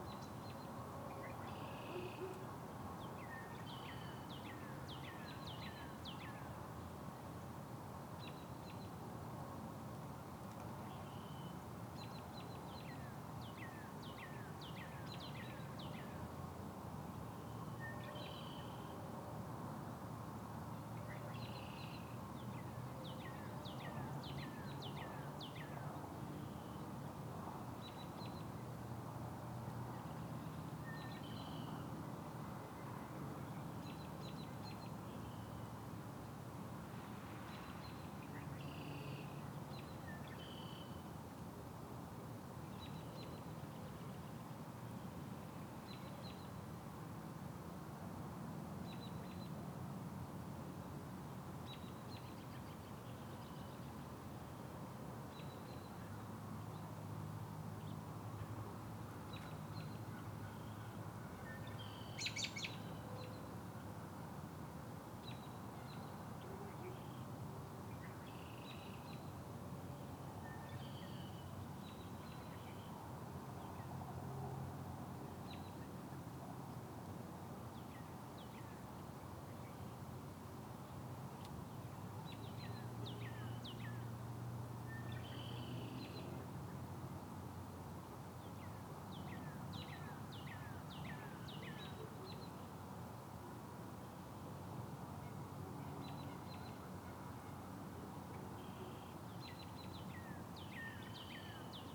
Waters Edge - Backyard Sounds 2022-03-17
Backyard sounds on a spring evening. A lot of birds can be heard as well as some aircraft, passing cars, and the neighbor kid on a trampoline.